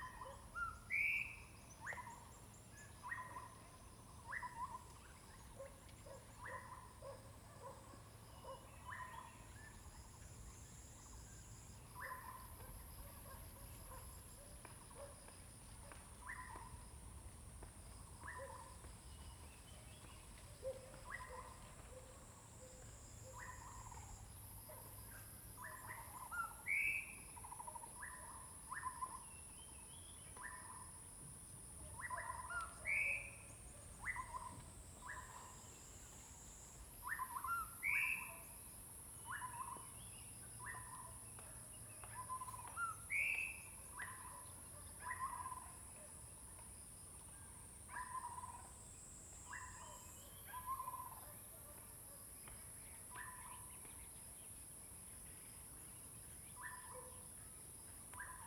Hualong Ln., Yuchi Township - Birds singing
Bird sounds, Dogs barking
Zoom H2n MS+XY
Puli Township, 華龍巷164號, 4 May